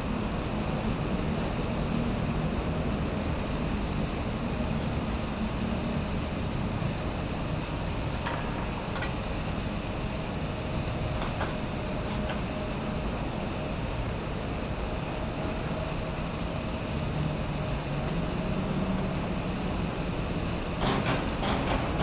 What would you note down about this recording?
Construction Yard, Machine rumbling noise, Cicada, 공사장, 굴삭기, 매미